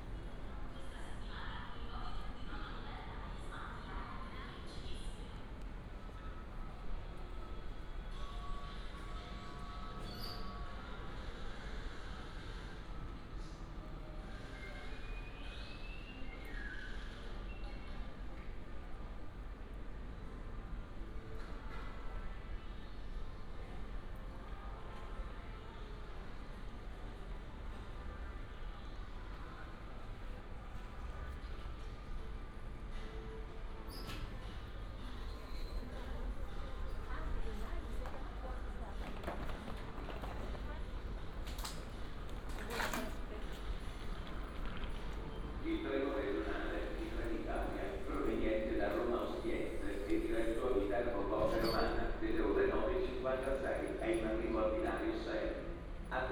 Rome, Roma Trastevere station - platform 2
(binaural) train arriving, idling and departing on one pair of tracks. second train only passing on the other side. their hum makes a storm of pulsing, bleeping sounds. announcements about delayed trains and sound coming form a cafe on the other side of the tracks